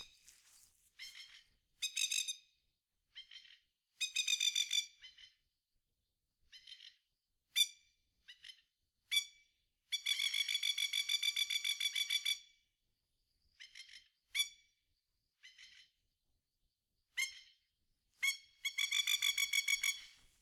Coomba Park NSW, Australia - Magpie calling
Two magpies communicating from two locations less than 20 metres apart. Recording taken by the lake at Coomba Park using an M-Audio Microtrack II stereo recorder.
4 December, 01:00